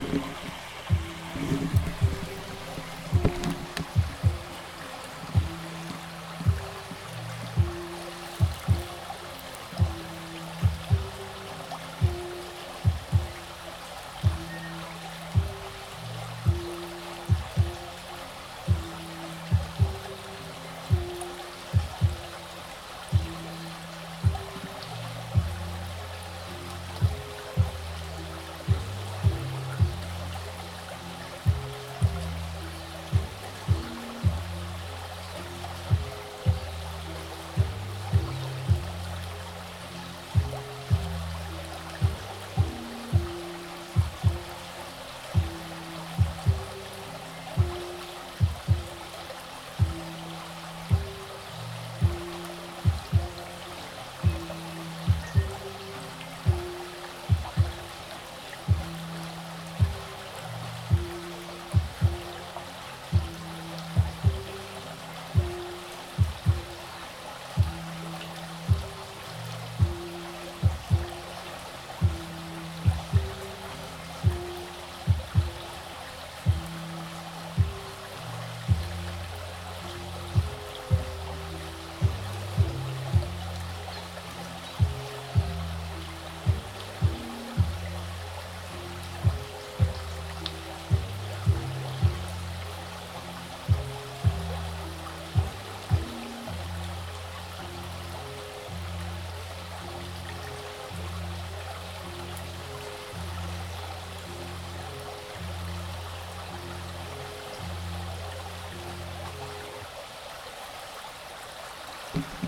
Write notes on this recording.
River-bank rambling down the longest suburban river in Tāmaki Makaurau / Auckland